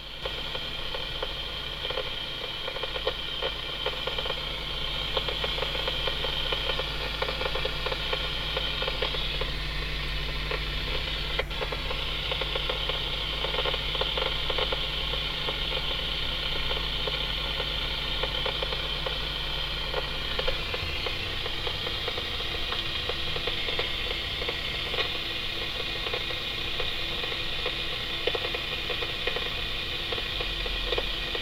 hosingen, centre d'intervention, signals and alarm sounds - hosingen, centre dintervention, radio communication
Here a short example of radio communication, a technique that is nowadays not so often in use anymore in the daily praxis of the operational briefings.
Hosingen, Einsatzzentrum, Funkverbindung
Hier ein kurzes Beispiel einer Funkverbindung, eine Technik, die heutzutage im täglichen Leben der Einsatzbesprechungen nicht mehr so oft genutzt wird.
Hosingen, centre d'intervention, communication radio
Maintenant un petit exemple de communication radio, une technique qui n’est plus aussi utilisée aujourd’hui dans la pratique des relations opérationnelles.